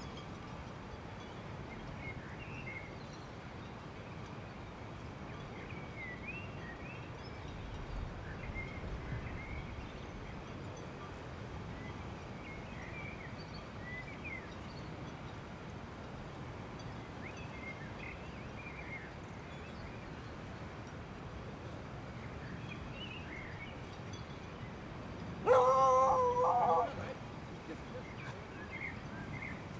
Avenue Michel Crépeau, La Rochelle, France - Entrance channel south quay La Rochelle - 6:27 am
P@ysage Sonore La Rochelle .
4 x DPA 4022 dans 2 x CINELA COSI & rycote ORTF . Mix 2000 AETA . edirol R4pro